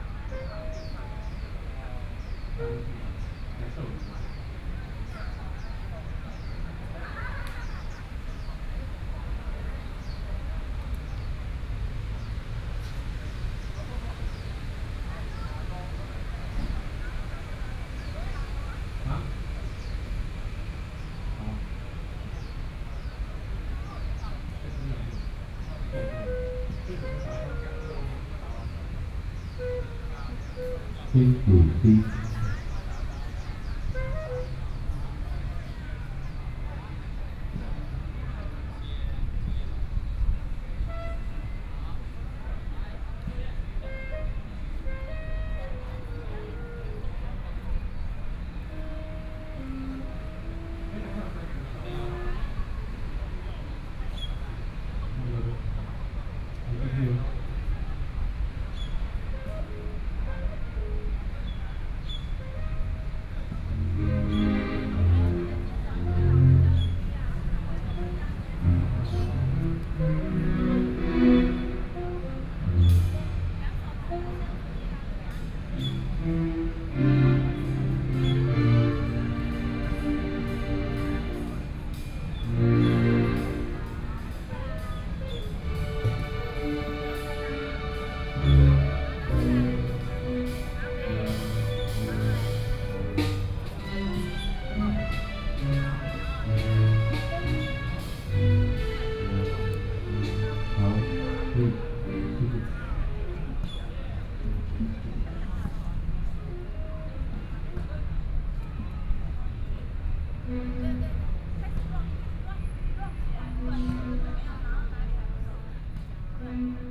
Chiang Kai-Shek Memorial Hall - Sound Test
Sound Test, Sony PCM D50 + Soundman OKM II